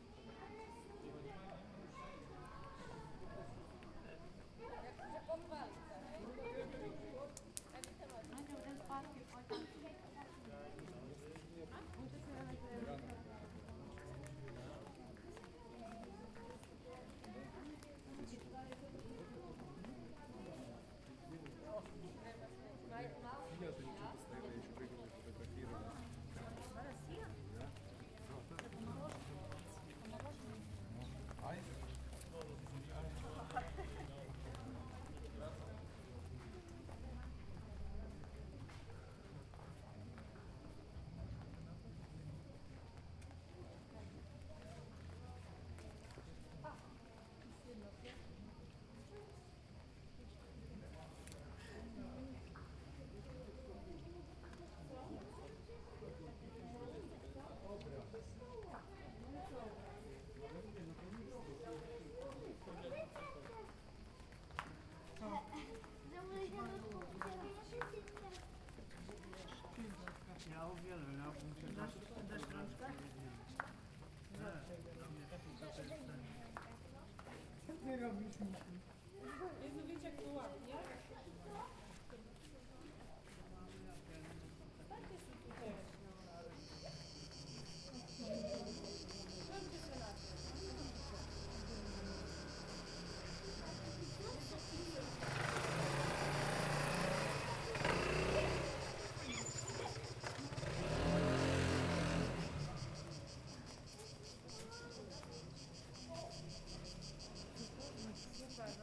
Jelsa, Hrvatska - Church bells at noon
Voices of tourists passing by an old church in Jelsa, Hvar. At noon the bells start chiming and children sing to the bells.
Jelsa, Croatia, August 2014